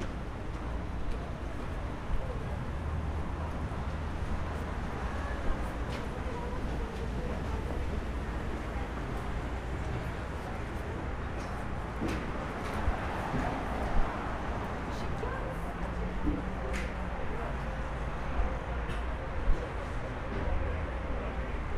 {
  "title": "Piata Uniri, walking the area",
  "date": "2011-11-22 14:24:00",
  "description": "Walking around Piata Uniri, traffic, construction works, people",
  "latitude": "44.43",
  "longitude": "26.10",
  "altitude": "77",
  "timezone": "Europe/Bucharest"
}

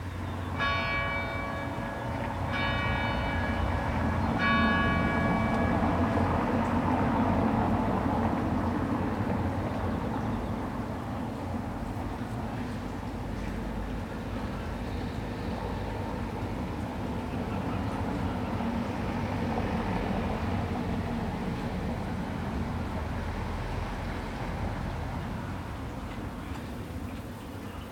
{
  "title": "Bissingen an der Teck, Deutschland - Bissingen an der Teck - Small square, fire service festivity",
  "date": "2014-08-10 14:44:00",
  "description": "Bissingen an der Teck - Small square, fire service festivity.\nBissingen was visited by R. M. Schafer and his team in 1975, in the course of 'Five Village Soundscapes', a research tour through Europe. So I was very curious to find out what it sounds like, now.\n[Hi-MD-recorder Sony MZ-NH900, Beyerdynamic MCE 82]",
  "latitude": "48.60",
  "longitude": "9.49",
  "altitude": "419",
  "timezone": "Europe/Berlin"
}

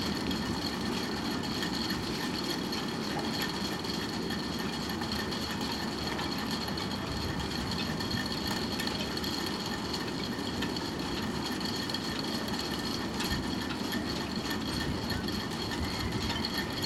{
  "title": "Lisbon, Belém, marina - mast symphony",
  "date": "2013-09-27 12:53:00",
  "description": "the rigging of the boats jingling and rattling creating a dense pattern. wind whistling among the masts.",
  "latitude": "38.69",
  "longitude": "-9.21",
  "altitude": "4",
  "timezone": "Europe/Lisbon"
}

